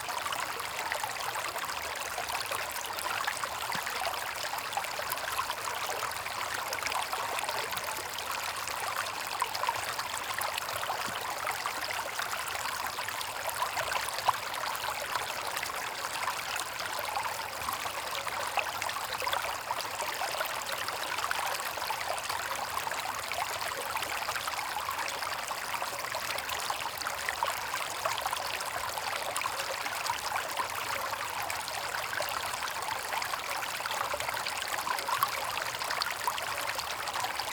Via Fossa Cieca, Massa MS, Italia - Frigido
Una registrazione in "close up" delle acque del fiume Frigido, che scorre sotto al ponte di ferro.
Massa MS, Italy, 8 August 2017